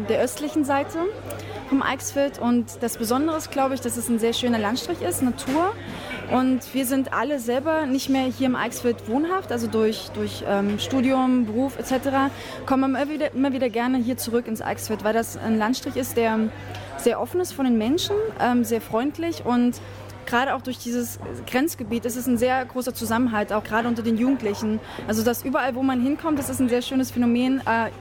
pferdeberg - bierzelt auf dem kolpingfest
Produktion: Deutschlandradio Kultur/Norddeutscher Rundfunk 2009